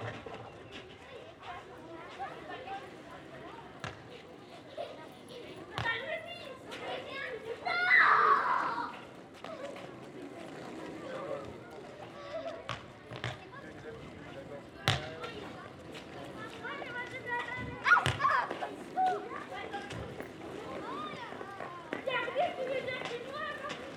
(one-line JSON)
{"title": "Le Bourg, Champsecret, France - Arrivée à lécole", "date": "2021-03-19 08:30:00", "description": "It's school time, children pull suitcases on wheels, take balloons. The first moments in school are made of games.", "latitude": "48.61", "longitude": "-0.55", "altitude": "217", "timezone": "Europe/Paris"}